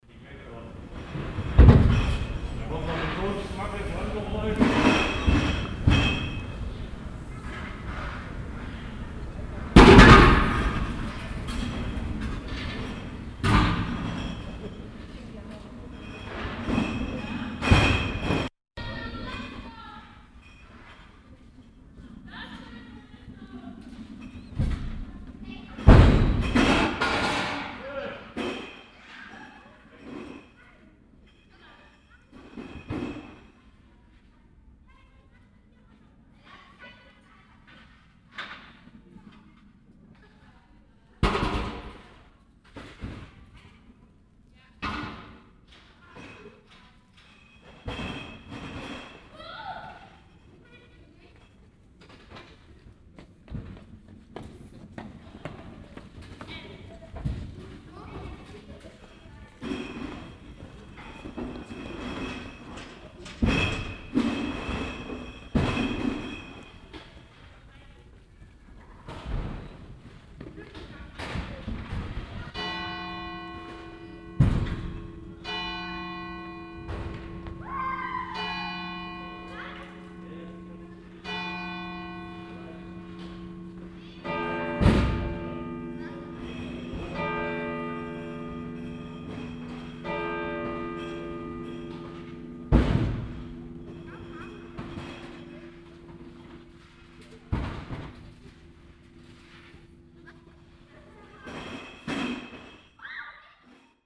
mettmann, kirchplatz, mittags
beladen eines getränkelastwagens, schritte auf kopfsteinpflaser, kirchglocken, mittags
- soundmap nrw
project: social ambiences/ listen to the people - in & outdoor nearfield recordings